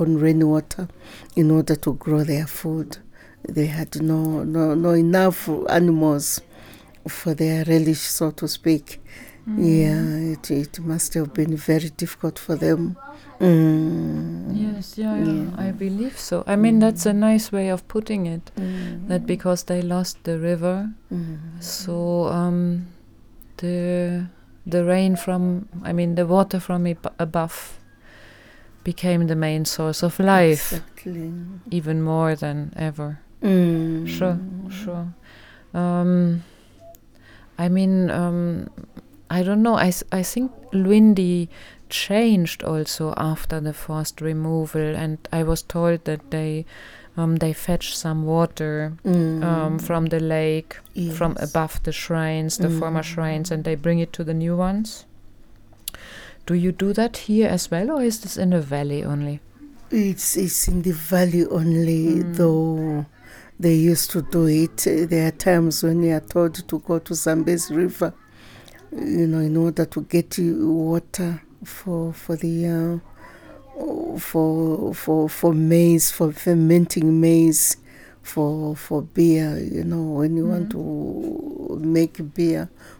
Residence of Chiefteness Mwenda, Chikankata, Zambia - Belonging comes natural with the sound of the drums from the Valley…
Chiefteness Mwenda was a baby girl of three at the time of the forced removal; but her father came from the valley, and the memory of the forced removal and resettlement of the Tonga people and, of the Tonga culture and tradition was very much present in the family when Eli Mwiinga was growing up... in this part of the interview, i encourage Chiefteness Mwenda to tell us a little more what the presence of this history means to her...
the entire interview with the Chiefteness is archived here:
Southern Province, Zambia, 4 September 2018